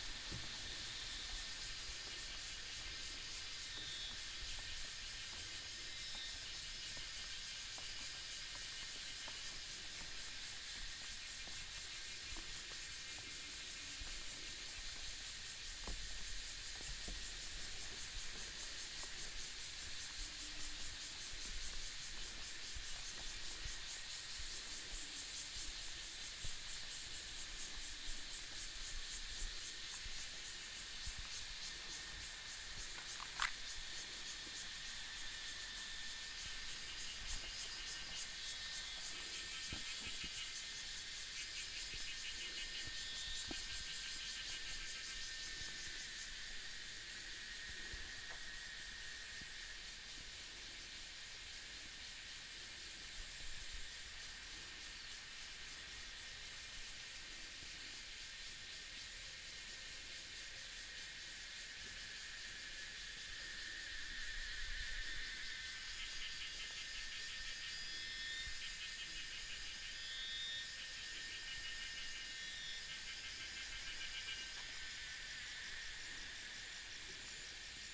白玉瀑布, Jhiben - Cicadas sound
No water waterfall, Sound from pressure pipe